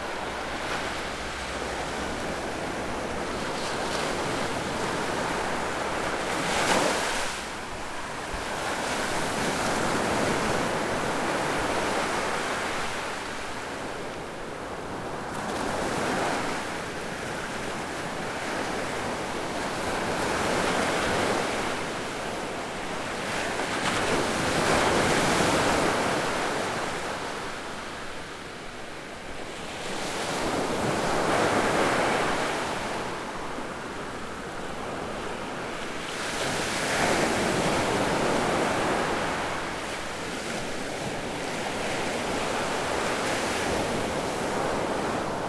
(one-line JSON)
{"title": "Benalmádena, España - Waves breaking / Olas rompiendo", "date": "2012-07-18 08:30:00", "description": "Waves breaking / Olas rompiendo", "latitude": "36.58", "longitude": "-4.57", "altitude": "15", "timezone": "Europe/Madrid"}